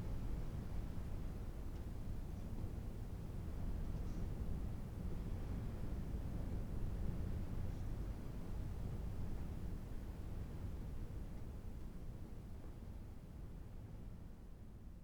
Caleta Maria, Región de Magallanes y de la Antártica Chilena, Chile - storm log - caleta maria storm outside
Inside Caleta Maria hut, storm outside at night, wind W 60km/h
Founded in 1942, Caleta Maria sawmill was the last of the great lumber stablishments placed in the shore of the Almirantazgo sound.
8 March 2019, 05:19